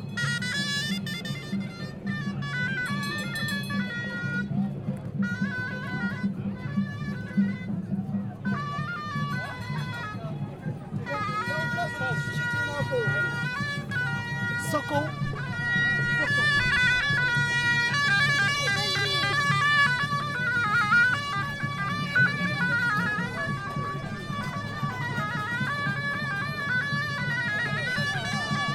Place Jemaa El Fna, Marrakech, Maroc - Place Jemaa El Fna in the afternoon
During the day, snake charmers, people who shows their monkey, women who put henna on your hands, are in the place
Marrakesh, Morocco, 27 February 2014